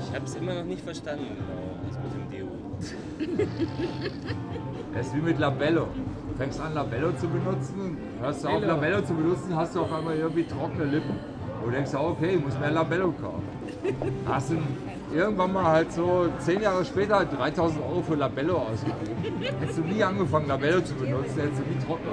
drunken guy explains his deodorant philosophy
the city, the country & me: june 27, 2010
27 June 2010, 23:43